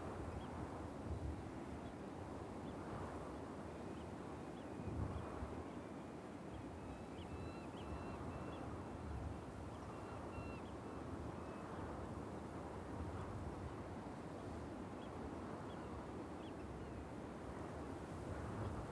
{"title": "Unnamed Road, Prestatyn, UK - Gronant Sand Dunes", "date": "2017-08-05 10:30:00", "description": "Morning meditation on top of sand dunes buffeted by the winds and joined, for a time, by some children playing in the dunes. Recorded on a Tascam DR-40 using the on-board microphones as a coincident pair (with windshield).", "latitude": "53.35", "longitude": "-3.36", "altitude": "7", "timezone": "Europe/London"}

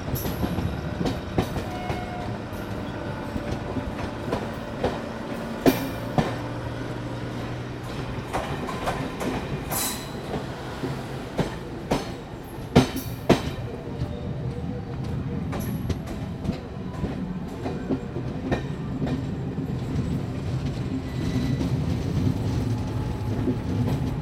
{"title": "Cantt, Mirpur Cantonment, Mirpur, Kanpur, Uttar Pradesh, Inde - Kampur Station", "date": "2003-03-14 17:00:00", "description": "Kampur Station\nAmbiance gare centrale de Kampur", "latitude": "26.45", "longitude": "80.35", "altitude": "128", "timezone": "Asia/Kolkata"}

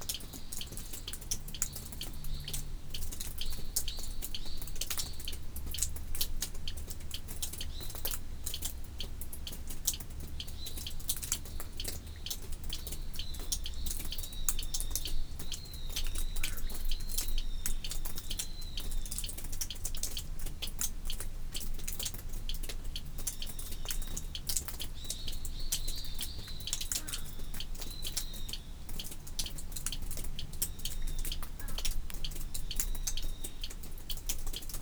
{"title": "Samak-san temple cave at dawn", "date": "2019-09-23 06:14:00", "description": "Near Samak-san temple complex...beneath a large cliff...a recess/cave...water dripping from it's roof after the continuous rains of summer, metronome like...at dawn...fog enclosed...sounds within x sounds entering from without...", "latitude": "37.84", "longitude": "127.67", "altitude": "285", "timezone": "Asia/Seoul"}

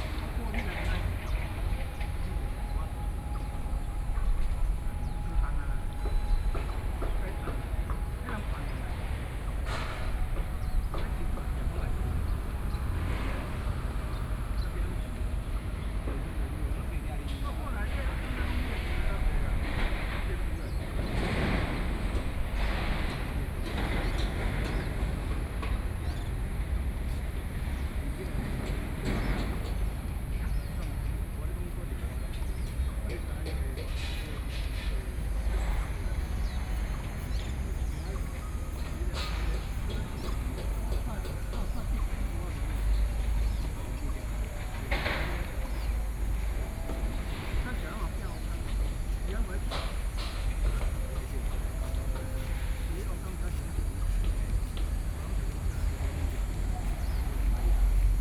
{"title": "Bihu Park, Taipei City - in the Park", "date": "2014-07-09 16:18:00", "description": "The distant sound of construction site", "latitude": "25.08", "longitude": "121.58", "timezone": "Asia/Taipei"}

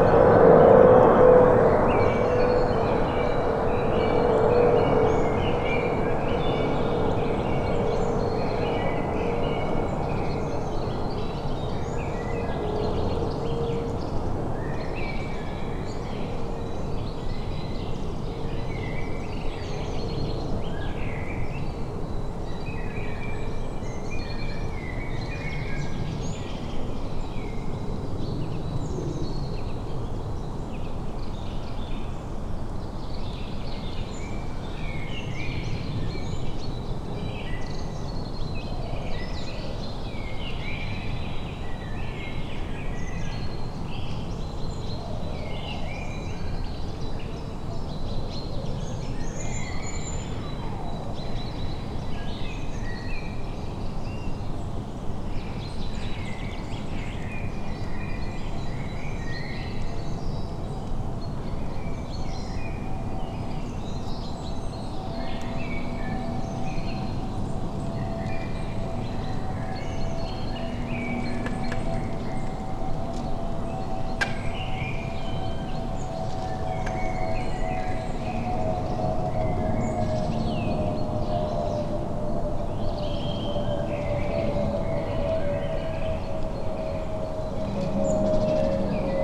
{"title": "Ленинский район, Московская область, Россия - Noise pollution.", "date": "2014-04-21 18:50:00", "description": "Sony ECM-MS2 --> Marantz PMD-661 mod --> RX3(Declip, Limiter, Gain).", "latitude": "55.56", "longitude": "37.72", "altitude": "179", "timezone": "Europe/Moscow"}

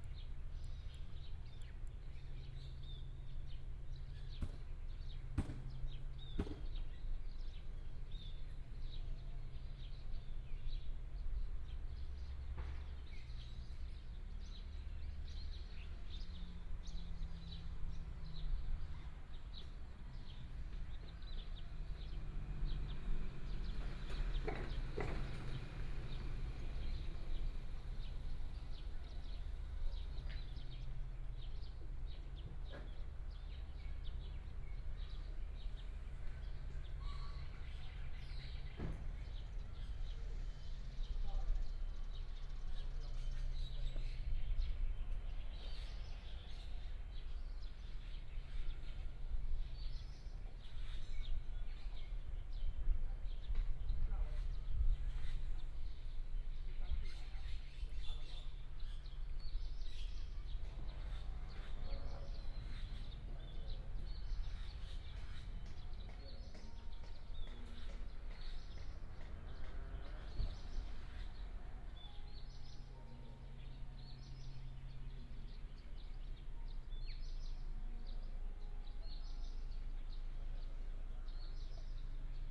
{"title": "太麻里鄉站前路, Taitung County - In the square", "date": "2018-03-14 13:54:00", "description": "In the square, Square outside the train station, birds sound, Traffic sound, Construction sound", "latitude": "22.62", "longitude": "121.01", "altitude": "56", "timezone": "Asia/Taipei"}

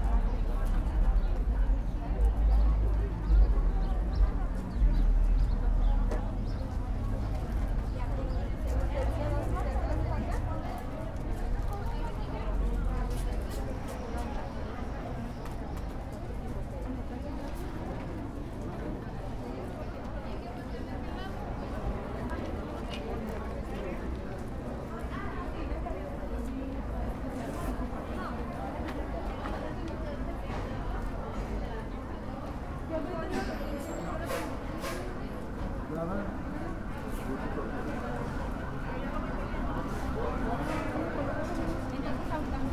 {"title": "Chihuahua, Bellavista, León, Gto., Mexico - One of the places where COVID-19 vaccines are given to people 40 to 49 years of age. This time it is the second dose of AstraZeneca. C.A.I.S.E.S.", "date": "2021-09-13 12:46:00", "description": "I made this recording on September 13th, 2021, at 12:46 p.m.\n*When trying to amplify this file, it showed an error and did not allow anything to be done. I am uploading the original file of the recording.\nI used a Tascam DR-05X with its built-in microphones and a Tascam WS-11 windshield.\nOriginal Recording:\nType: Stereo\nUno de los lugares en los que aplican vacunas contra COVID-19 a personas de 40 a 49 años de edad. Esta vez es la segunda dosis de Astrazeneca. C.A.I.S.E.S.\nEsta grabación la hice el 13 de septiembre de 2021 a las 12:46 horas.\n*Al intentar amplificar este archivo marcaba un error y no dejaba hacerle nada. Estoy subiendo el archivo original de la grabación.\nUsé un Tascam DR-05X con sus micrófonos incorporados y un parabrisas Tascam WS-11.", "latitude": "21.12", "longitude": "-101.69", "altitude": "1800", "timezone": "America/Mexico_City"}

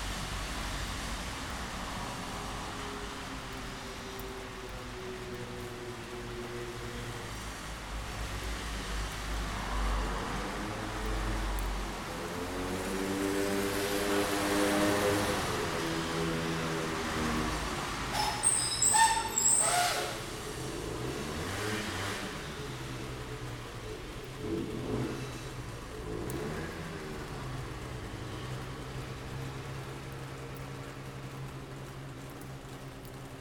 {"title": "La Salud, Barcelona, Barcelona, España - Afternoon rain", "date": "2014-09-22 17:00:00", "description": "Afternoon rain recorded from my bedrrom window.", "latitude": "41.41", "longitude": "2.15", "altitude": "99", "timezone": "Europe/Madrid"}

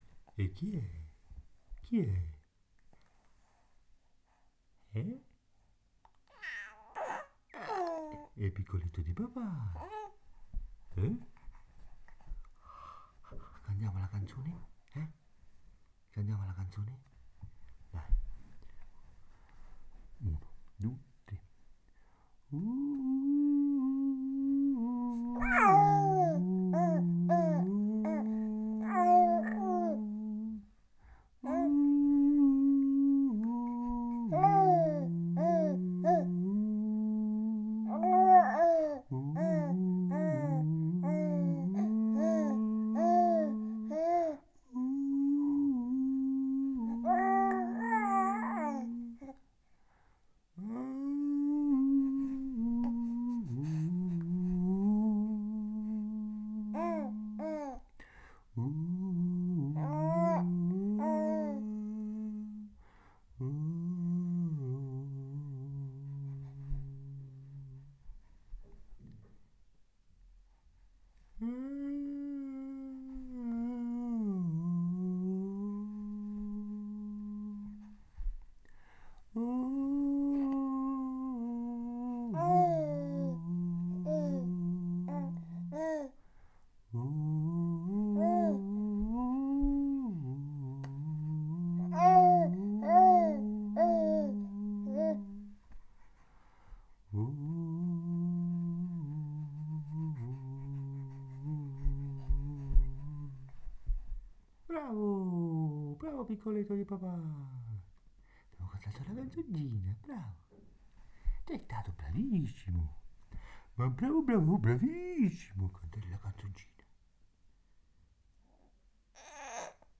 {"title": "ViTo sing with me", "date": "2012-04-08 07:58:00", "description": "my son, ViTo, 3 months and 20 days old, sing with me...", "latitude": "42.86", "longitude": "13.57", "altitude": "152", "timezone": "Europe/Rome"}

